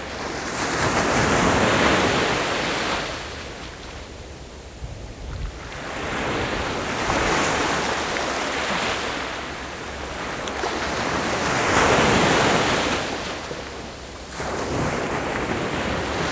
Shimen, New Taipei City - The sound of the waves
桃園縣 (Taoyuan County), 中華民國, 25 June 2012, 14:57